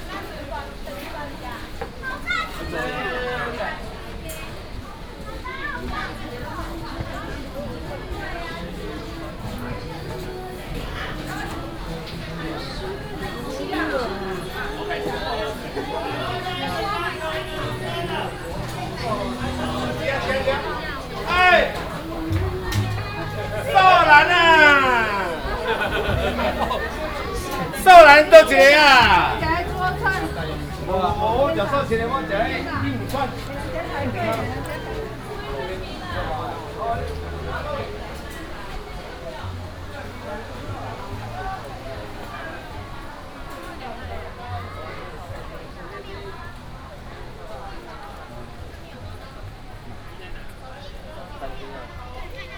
十分風景特定區, Pingxi District, New Taipei City - Walking in the Falls Scenic Area
Walking in the Falls Scenic Area
Pingxi District, New Taipei City, Taiwan, 4 December 2016, 11:53